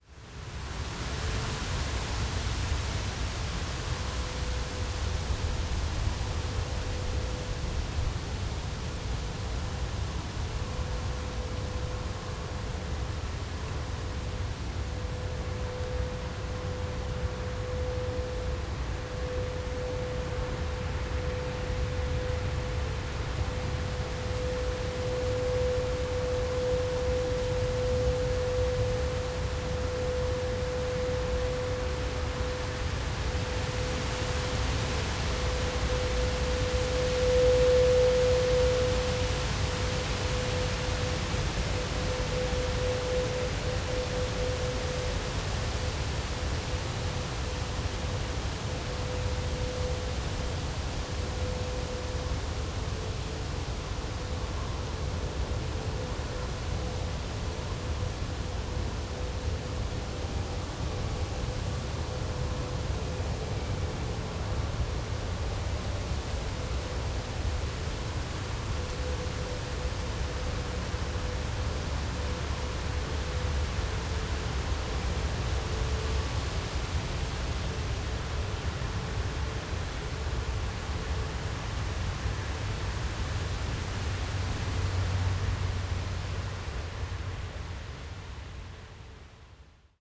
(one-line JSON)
{"title": "Garzweiler II, near Gustorf - sound from afar, wind", "date": "2012-11-01 18:25:00", "description": "wind and a distant musical sound from the Garzweiler mining area, short excerpt, that has to be researched in depth...\n(SD702, DPA4060)", "latitude": "51.07", "longitude": "6.56", "altitude": "81", "timezone": "Europe/Berlin"}